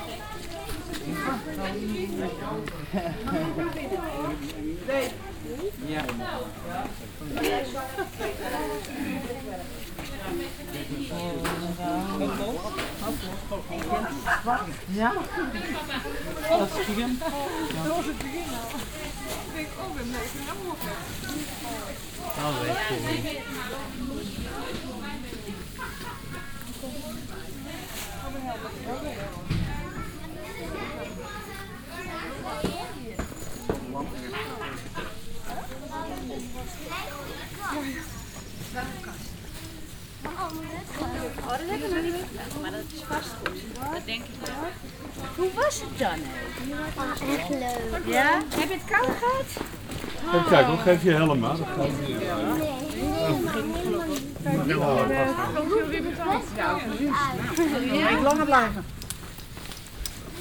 stolzembourg, old copper mine, tourist group
A group of dutch tourist exit the tunnel after a mine excursion. The sound of the plastic protection covers that they wear and their voices commenting the trip.
Stolzemburg, alte Kupfermine, Touristengruppe
Eine Gruppe von niederländischen Touristen verlässt den Tunnel nach einer Minenexkursion. Das Geräusch des Plastik-Schutzes, den sie tragen, und ihre Stimmen, die den Ausflug kommentieren.
Stolzemburg, ancienne mine de cuivre, groupe de touristes
Un groupe de touristes hollandais sort du tunnel après une excursion dans la mine. Le bruit des vêtements de protection en plastique qu’ils portent et leurs voix commentant la visite.
Project - Klangraum Our - topographic field recordings, sound objects and social ambiences
Stolzembourg, Luxembourg, 9 August 2011, 23:00